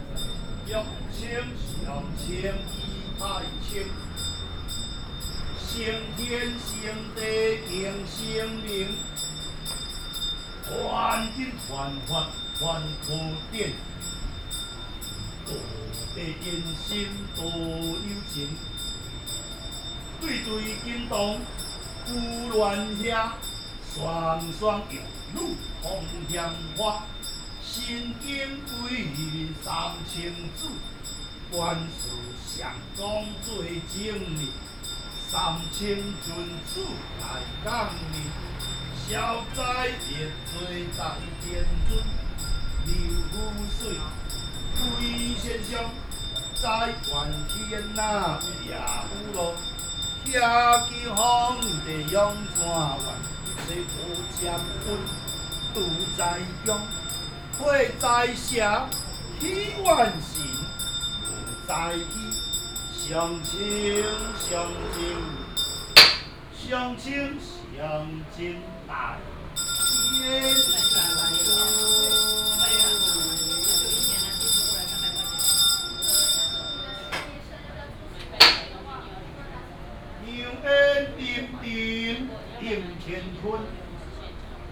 大稻埕霞海城隍廟, Taipei City - conduct a religious rite
conduct a religious rite, Traffic sound, In the temple
Datong District, Taipei City, Taiwan, 10 April 2017